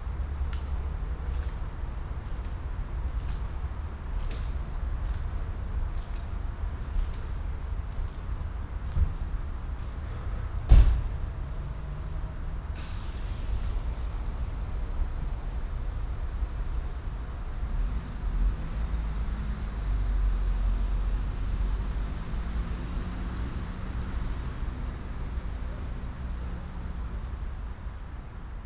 cologne, weiden, lenau-hoelderlinstrasse, gang unter bäumen
nachmittags in einfamilienhaussiedlung, ein luxus pkw startet, gang durch regennassen weg unter bäumen, hundespaziergänger, schritte auf matschigem grund
soundmap nrw - social ambiences - sound in public spaces - in & outdoor nearfield recordings